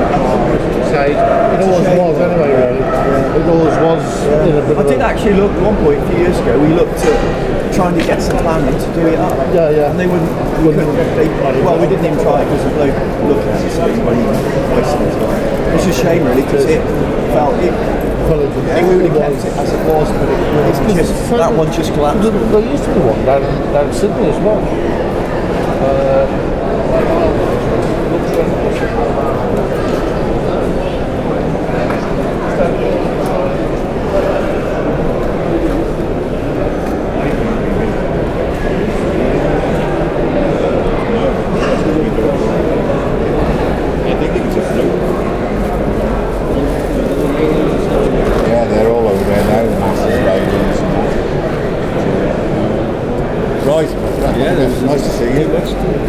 Tractor Show at The 3 Counties Showground, Malvern, UK - Show
This crowd is inside a series of large hangers for the exhibition of tractors and farm equipment.
MixPre 6 II with 2 x Sennheiser MKH 8020s.
England, United Kingdom, 3 August 2019, 11:35